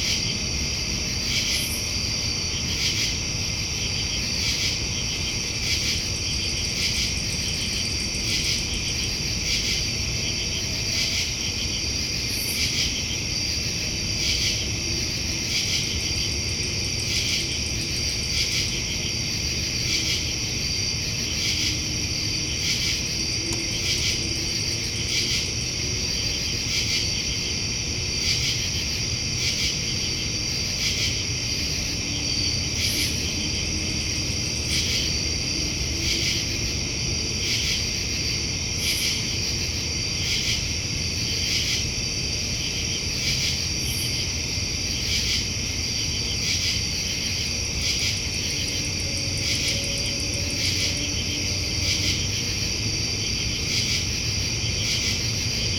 Aronow Pl, Mahwah, NJ, USA - Nocturnal Insect Chorus
A chorus consisting of crickets, katydids, and other nocturnal insects. This audio was captured from an open window in a large house. Cars can be heard in the background, as can the hum of an AC fan.
[Tascam DR-100mkiii w/ Primo EM-272 omni mics]
New Jersey, United States, 23 August